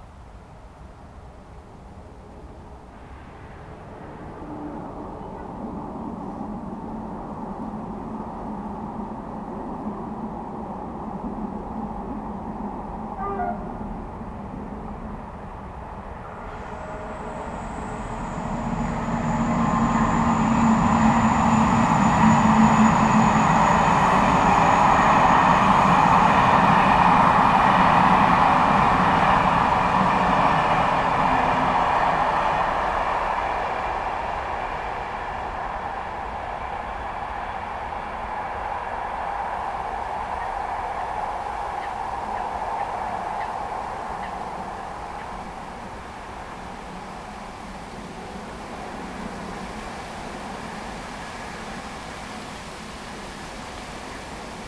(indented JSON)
{
  "title": "Chem. du Port, Brison-Saint-Innocent, France - Trains en courbe.",
  "date": "2022-10-03 18:10:00",
  "description": "Baie de Grésine près d'une grande courbe du chemin de fer. L'ambiance sonore du lieu a beaucoup changé depuis la fermeture de la RN 991 qui longe le lac pour deux mois de travaux plus aucune voiture. Il reste le vent dans les roseaux quelques oiseaux et les passages de trains.",
  "latitude": "45.73",
  "longitude": "5.89",
  "altitude": "240",
  "timezone": "Europe/Paris"
}